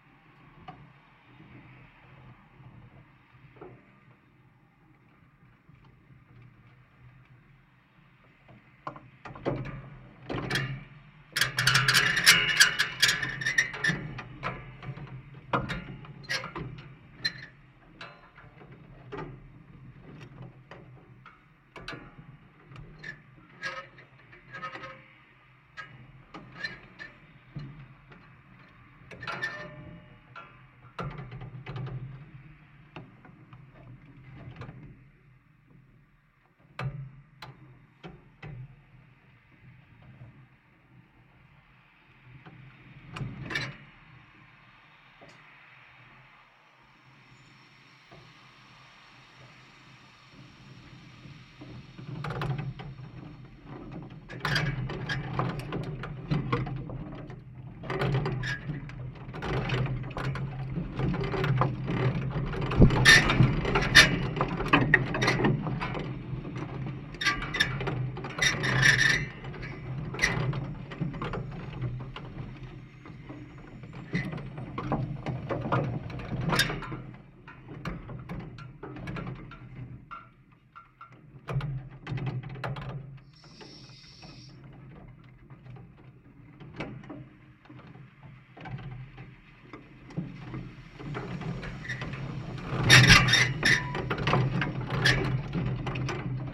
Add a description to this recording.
Quadruple contact microphone recording of a metal frame of a tent. Blowing wind forces the metal construction to crack and clank in complex and interesting ways. A little bit of distant traffic hum is also resonating here and there throughout the recording. Recorded with ZOOM H5.